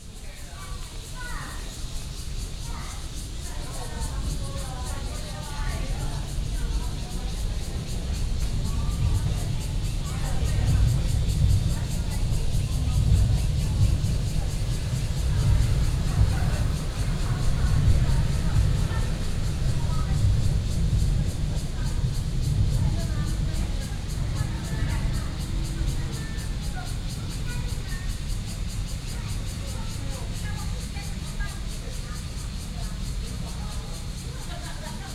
Beitou District, Taipei City - In the next coffee shop
In the next coffee shop, Traffic Sound, Sitting below the track, MRT train passes, Cicadas sound
Sony PCM D50+ Soundman OKM II